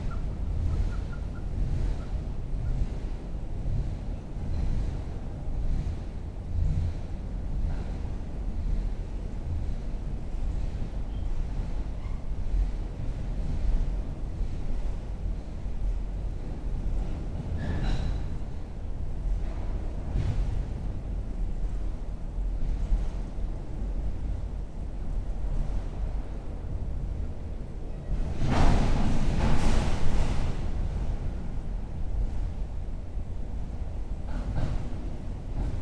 On the trestle bridge of Tanyard Creek Park, which passes directly under a set of active railroad tracks. I arrived here just in time to record a train passing overhead, which can be heard as a low rumble with occasional banging and scraping. Other visitors passed through this area as well. The creek has a very faint trickle which can be heard when the train slows down and eventually halts.
[Tascam Dr-100mkiii & Primo Clippy EM-272]